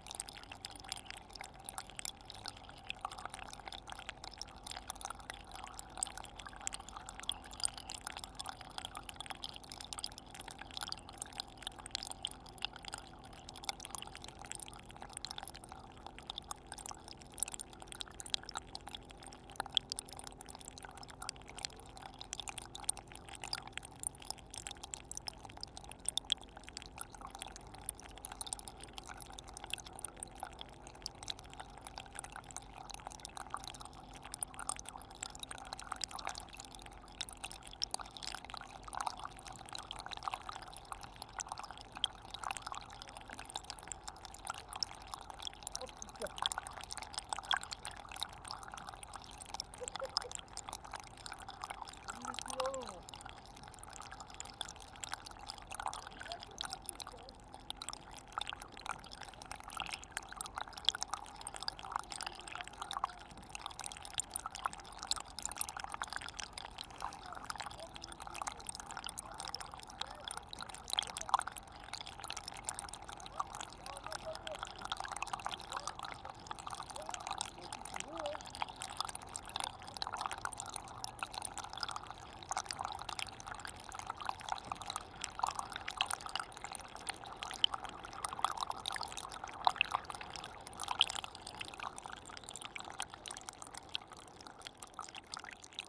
Loix, France - Small stream
A small stream, during a very low tide on the beach of Loix. Just near is fort du Grouin, an old bunker converted to a house now.